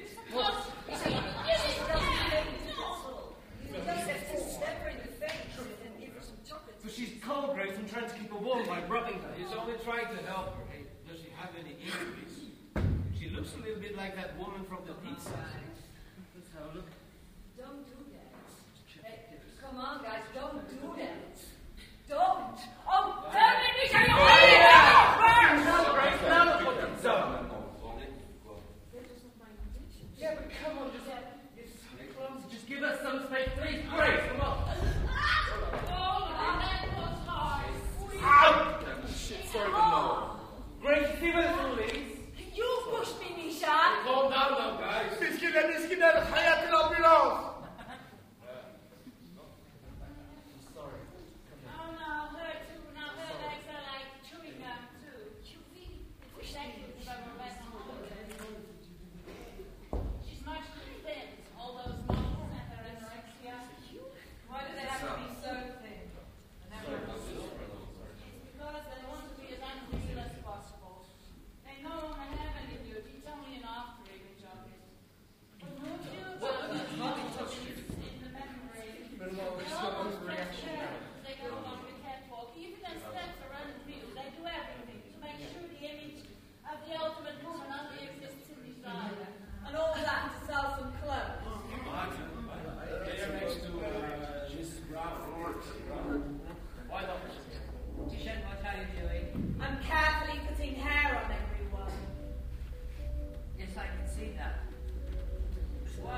essen, zeche zollverein, pact - essen, zeche zollverein, pact, performance, jan lauwers & needcompany - the deer house
audio excerpt of a performance of the Jan Lauwers & Needcompany piece - the deer house at pact zollverein
soundmap nrw: social ambiences/ listen to the people - in & outdoor nearfield recordings